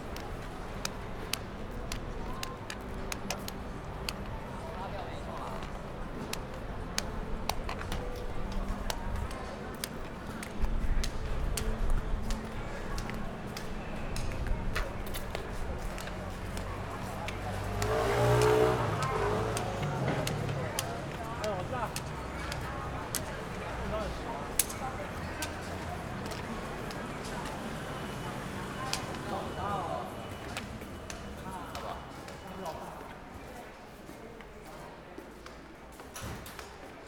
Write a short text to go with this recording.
In the corner of the street, Followed a blind, The visually impaired person is practicing walking on city streets, Zoom H6 Ms + SENNHEISER ME67